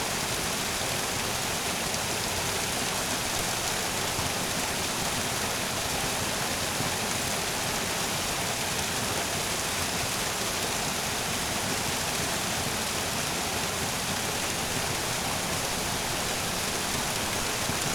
the swamp is frozen, however this small waterfall is still alive